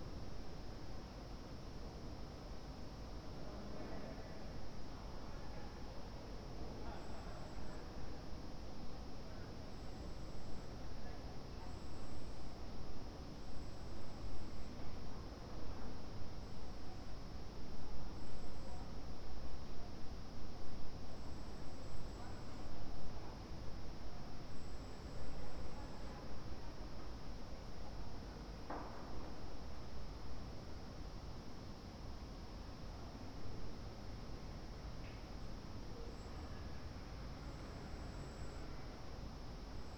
Ascolto il tuo cuore, città, I listen to your heart, city. Several chapters **SCROLL DOWN FOR ALL RECORDINGS** - Windy afternoon on terrace in the time of COVID19: soundscape.
"Windy afternoon on terrace in the time of COVID19": soundscape.
Chapter CLIII of Ascolto il tuo cuore, città. I listen to your heart, city
Thursday January 14th 2021. Fixed position on an internal terrace at San Salvario district Turin, more then nine weeks of new restrictive disposition due to the epidemic of COVID19.
Start at 01:40 p.m. end at 02:03 p.m. duration of recording 33’05”
Piemonte, Italia, 14 January 2021, 13:40